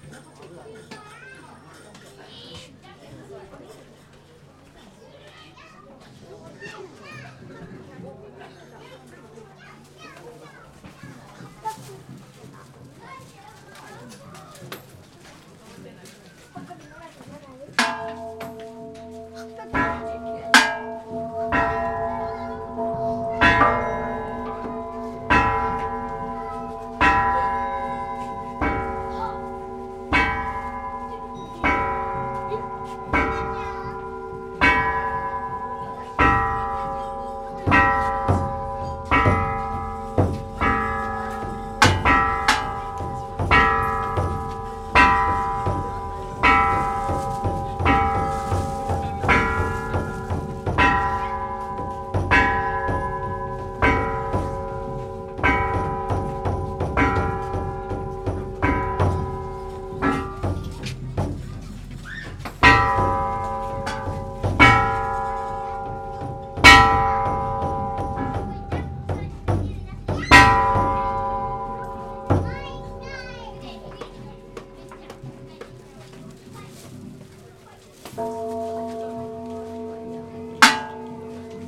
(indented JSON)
{
  "title": "Tachiki Kannon Anyo-Ji - New Years Gongs at Tachiki Kannon",
  "date": "2019-01-27 16:00:00",
  "description": "Tachiki Kannon is a temple/shrine in the city of Otsu. The temple is located at the top of a hill reached by climbing hundreds of steep stone steps.\nEvery Sunday during the first month of the year the temple is open and active for bestowing new year's luck. By custom every person in the neighborhood is supposed to visit to the temple during this time.\nIn the main area worshippers line up to offer money and obeisances to an incense clouded shrine in which Shinto priests are wailing on an array of gongs, drums and chimes. It is hard to be in this area for more than a few minutes as the sound is nearly deafening.",
  "latitude": "34.92",
  "longitude": "135.91",
  "altitude": "239",
  "timezone": "GMT+1"
}